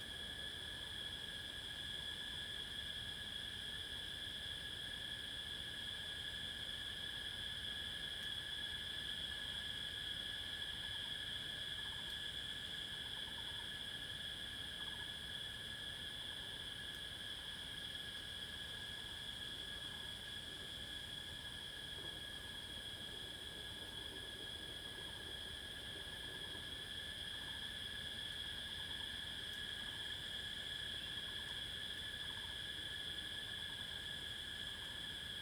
華龍巷, 埔里鎮Nantou County - In the woods
In the woods, Cicada sounds
Zoom H2n MS+XY